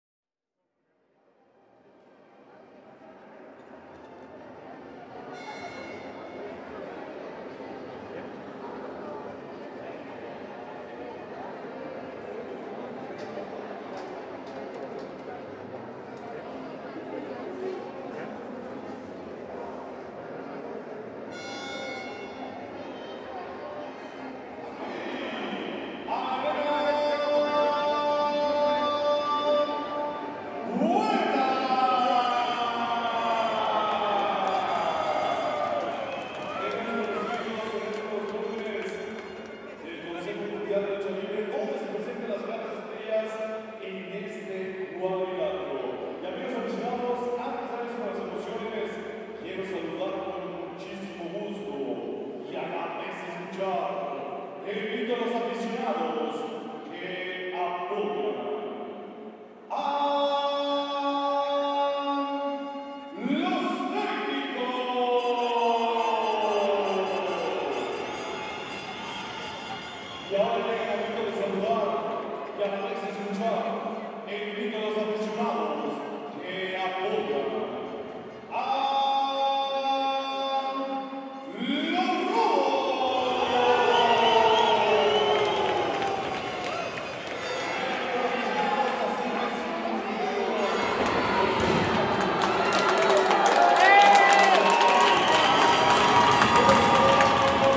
Puebla - Mexique
Puebla Arena
Une soirée Lucha Libre
ZOOM H6
Puebla, México, 23 November 2021